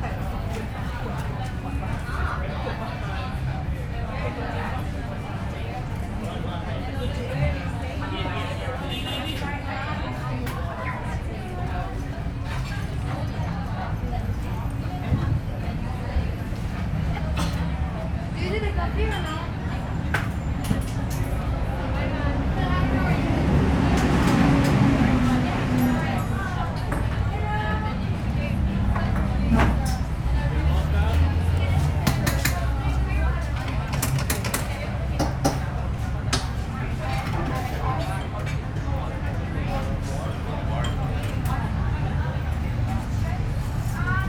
neoscenes: cafe for lunch with Morgan
Sydney NSW, Australia, 21 November 2009